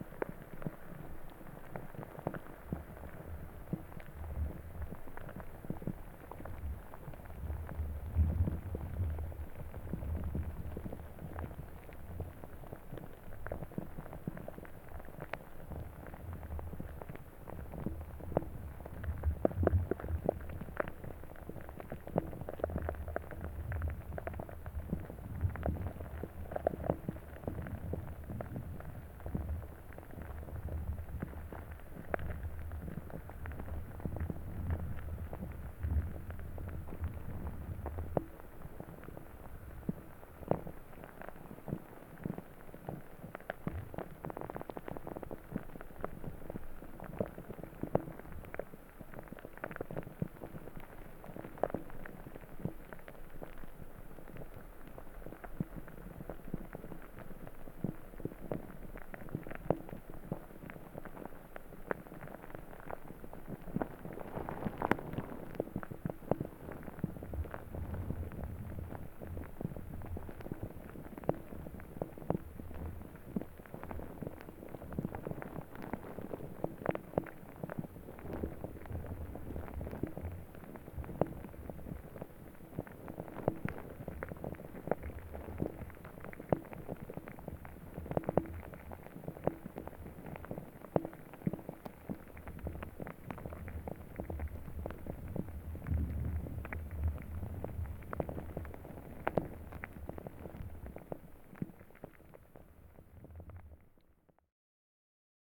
Vilnius, Lithuania, moving ice in river
Moving ice in river Neris. Recorded with contact microphones and geophone
3 March, ~13:00